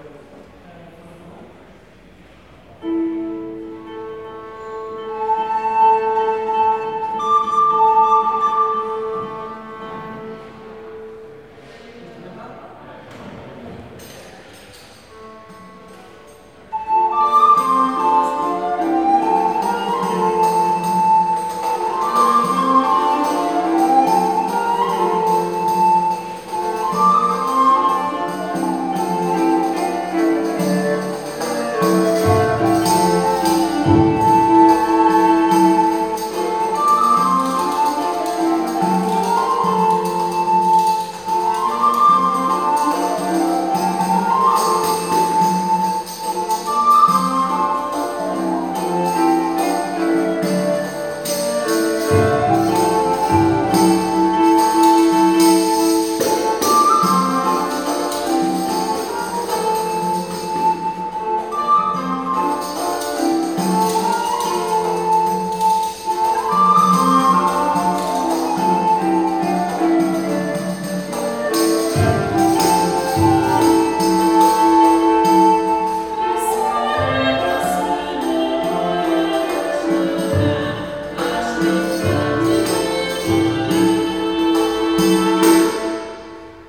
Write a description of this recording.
In a room of the Sintra's palace, a group of musicans, Capella Sanctae Crucis, repeats for a concert of iberical music from the 16th century. The piece from an anonymous, is called 'Tres morillas'. Marie Remandet, voice, Tiago Simas Freire, fute, cornet and conducting, Ondine Lacorne-Hébrard, viela de arco, Sara Agueda Martin, harp, Rui Silva, percussions